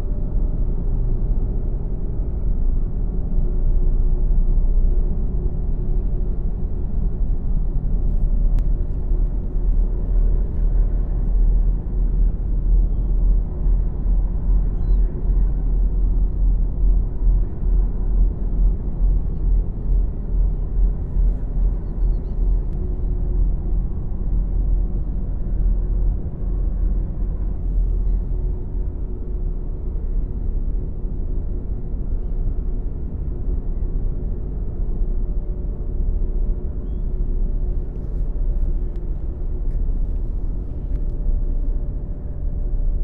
Le Port, Le Havre, France - The enormous lock
The François Premier bridge is an enormous lock. The moving part weights 3300 tons. Here during the recording, a gigantic boat is passing by, the lock is open. It's the Grande Anversa from Grimaldi Lines, which weights 38.000 tons.
20 July, 22:30